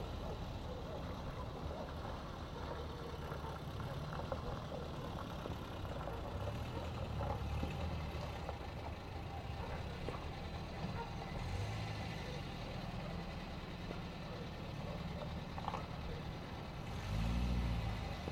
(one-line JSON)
{"title": "Niebla, Valdivia, Los Ríos, Chili - LCQA AMB NIEBLA FROM TOP EVENING LARGE DOGS REVERB CAR PASSING AIRY MS MKH MATRICED", "date": "2022-08-24 20:00:00", "description": "This is a recording of Niebla from a top hill during evening. I used Sennheiser MS microphones (MKH8050 MKH30) and a Sound Devices 633.", "latitude": "-39.87", "longitude": "-73.39", "altitude": "106", "timezone": "America/Santiago"}